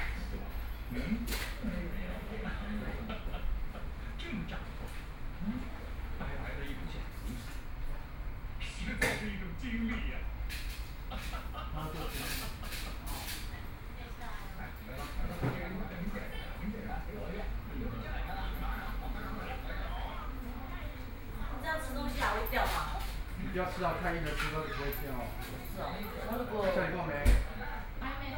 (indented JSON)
{
  "title": "Zhongyang N. Rd., Beitou Dist. - Dental Clinic",
  "date": "2013-10-11 20:16:00",
  "description": "Dental Clinic, TV sound, Physicians and the public dialogue, Binaural recordings, Sony Pcm d50+ Soundman OKM II",
  "latitude": "25.14",
  "longitude": "121.50",
  "altitude": "17",
  "timezone": "Asia/Taipei"
}